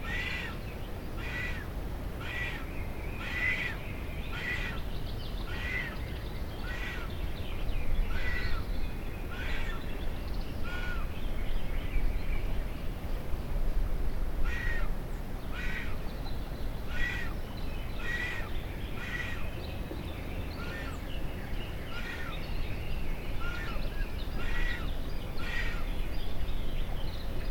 Recording of a Vulture's nest in La Fuentona, Soria, Spain. May 2013
Later in the recording a massive vulture takes off from the nest.
Castilla y León, España